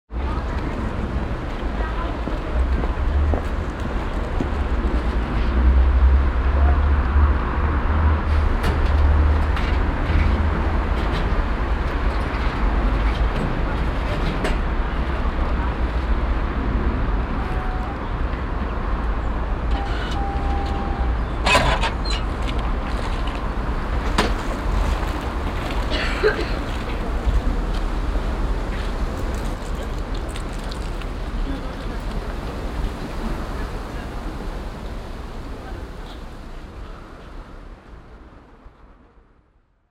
hilden, sbahnstation, richrather strasse
atmosphäre an der haltestelle, wartende menschen, bedien eines fahrkartenautomatens, hintergrundsrauschen des verkehrs
soundmap nrw:
topographic field recordings, social ambiences